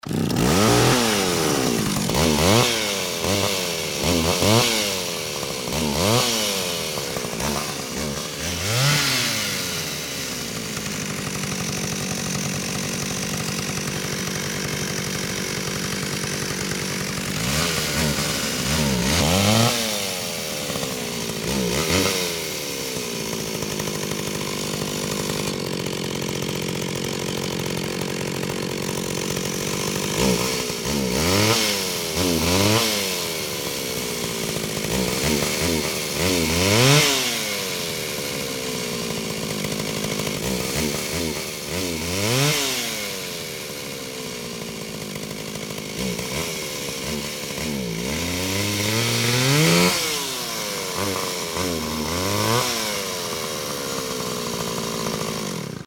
heiligenhaus - am steinbruch - motorsäge
mittags im frühjahr 07, zerteilen von baumscheiben
soundmap nrw: social ambiences/ listen to the people - in & outdoor nearfield recordings